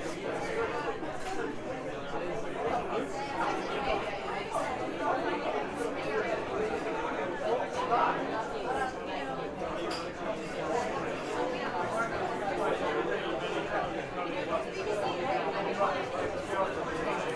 White Hart, London
Sounds inside the White Hart pub. Claims to be the oldest licenced premises in London. (Other pubs claim the same)